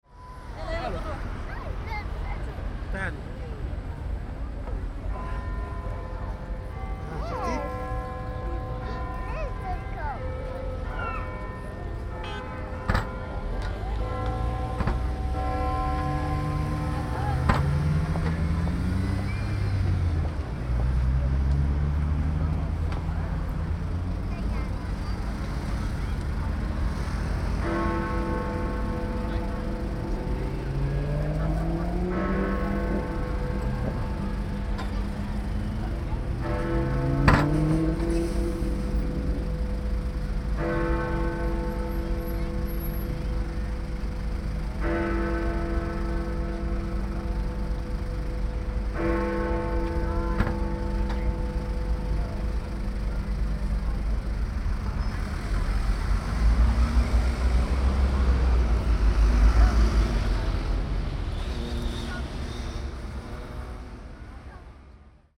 Cité de Westminster, Grand Londres, Royaume-Uni - BINAURAL Big Ben!
BINAURAL RECORDING (have to listen with headphones!!)
Big Ben "ringing"